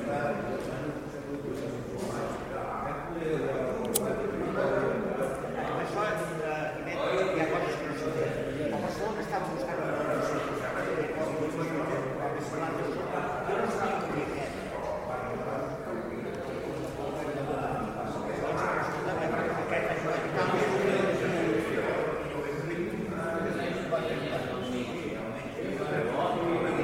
Sant Feliu de Guíxols, Espagne - gran café
This is a recording of the ambiance in a grand cafe at San Filiu de Guixols. In the first part we can hear voices filling the space, & almost feel them sounding with the woody matter of the room. In the second part some electronic sounds of a machine gradually invade the environment & the voices seem to be less at ease or even shut. This is to illustrate an aspect of the recent evolution of our sound environment.